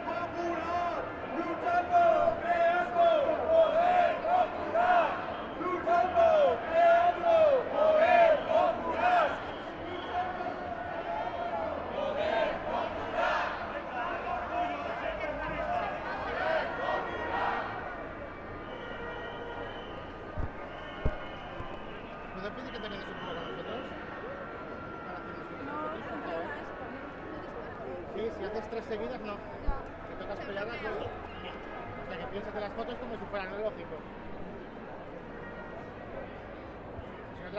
Sant Francesc, Valencia, Valencia, España - 1 de mayo
1 de mayo